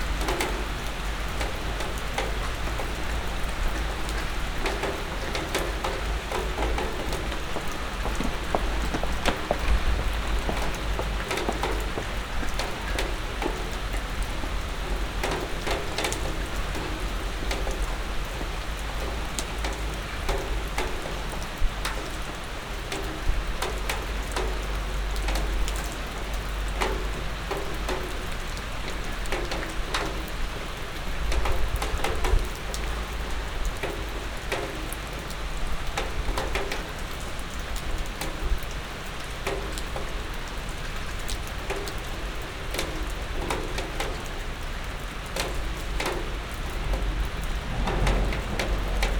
berlin, sanderstraße: vor architekturbüro - the city, the country & me: in front of an architect's office
under porch of the office
the city, the country & me: july 18, 2012
99 facet of rain
Berlin, Germany, July 2012